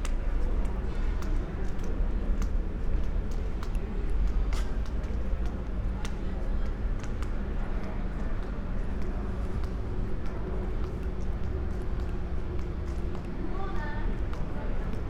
Rotovški trg, Maribor - summer night walk
Maribor, Slovenia, 27 June 2014